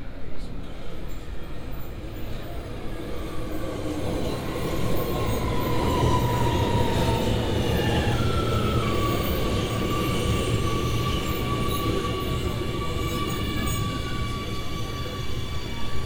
Europaplein, Amsterdam, Netherlands - (305) Metro platform + ride
Binaural recording of metro platform + subsequent metro ride. Unfortunately, the exact location is unsure, but start at Europaplein is pretty feasible.
Recorded with Soundman OKM + Sony D100
Noord-Holland, Nederland, 18 September 2017, 16:52